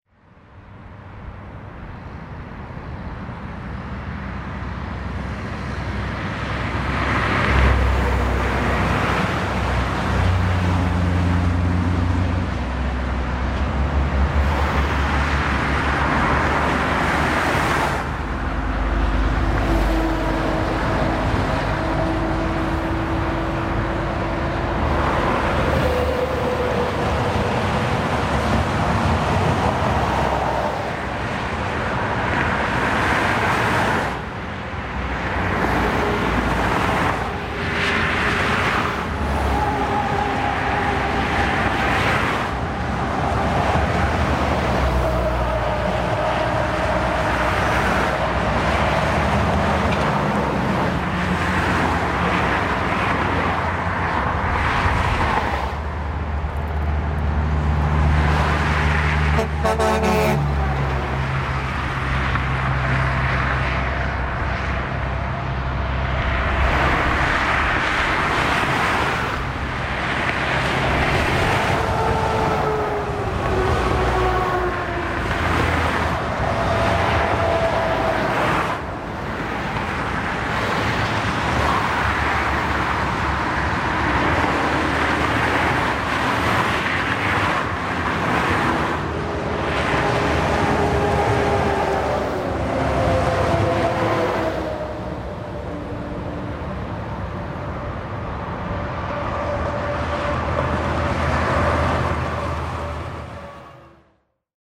Produktion: Deutschlandradio Kultur/Norddeutscher Rundfunk 2009